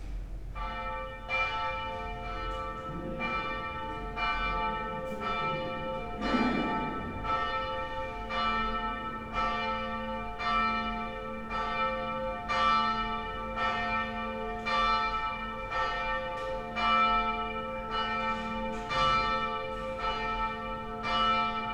St. Pelagius, Novigrad - crypt resonance